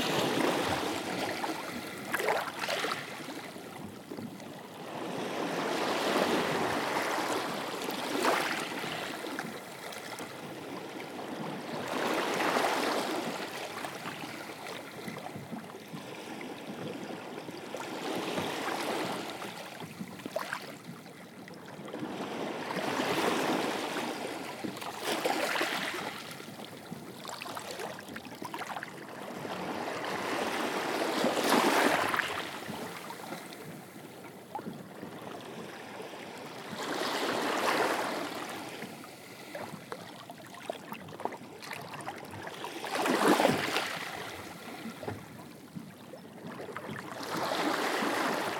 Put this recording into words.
Recorded on a calm morning with Zoom H1 placed on mini tripod as close to the waves as possible.